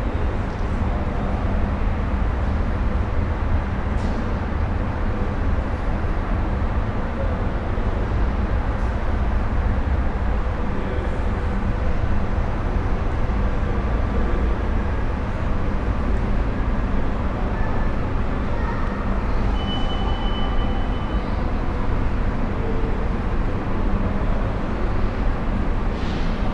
Former powerstation at Santral Istanbul
walking through the great halls of the former power station at Santral Istanbul.
Istanbul/Istanbul Province, Turkey